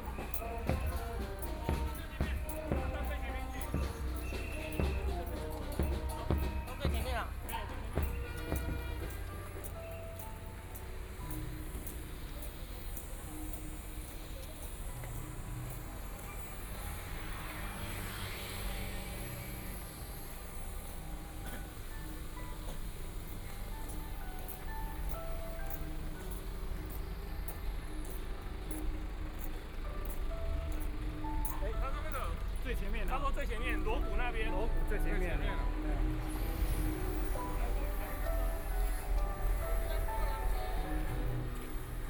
Funeral, Zoom H4n+ Soundman OKM II